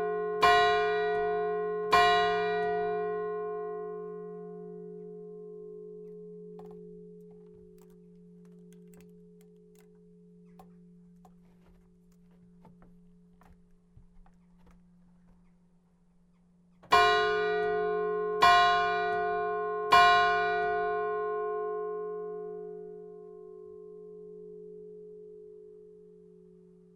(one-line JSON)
{"title": "Chastre, Belgique - Blanmont bells", "date": "2011-03-13 12:00:00", "description": "Angelus at 12 and the small bell ringing just after.", "latitude": "50.62", "longitude": "4.64", "timezone": "Europe/Brussels"}